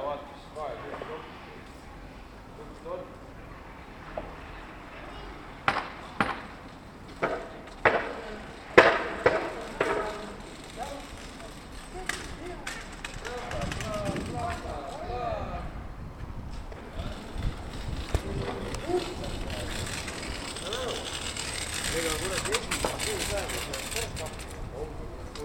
{
  "title": "Tallinn, Vene, coblestones, kid",
  "date": "2011-04-19 17:58:00",
  "description": "coblestones, kid, working",
  "latitude": "59.44",
  "longitude": "24.75",
  "altitude": "19",
  "timezone": "Europe/Tallinn"
}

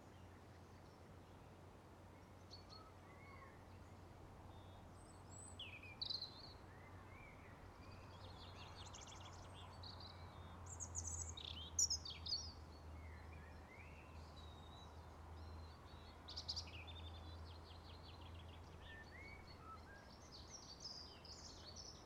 {
  "title": "Birds of Corcomroe Abbey, Co. Clare, Ireland",
  "date": "2013-05-19 18:40:00",
  "description": "spring birds near the abbey ruins",
  "latitude": "53.13",
  "longitude": "-9.05",
  "altitude": "25",
  "timezone": "Europe/Dublin"
}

